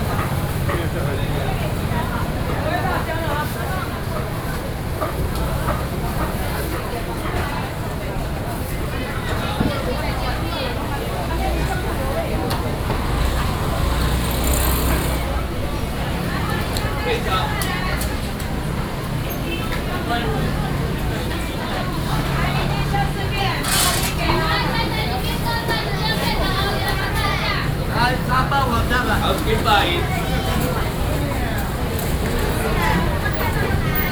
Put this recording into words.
Walking in the traditional market, Sony PCM D50+ Soundman OKM II